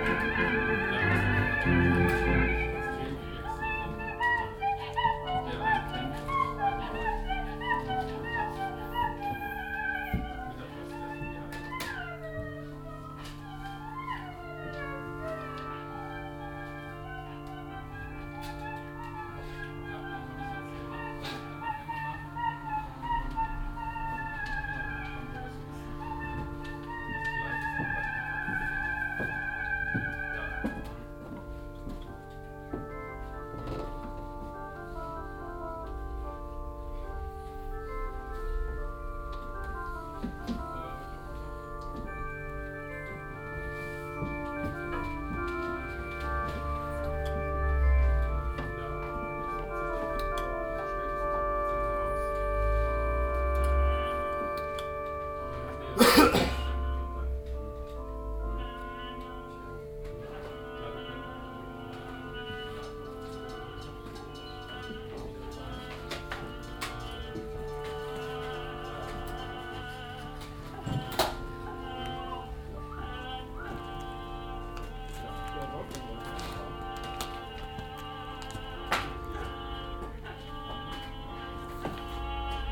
cologne, kleiner griechenmarkt, a-musik
aufnahme anlässlich des la paloma spezials
im plattenladden der a-musik
project: social ambiences/ listen to the people - in & outdoor nearfield recordings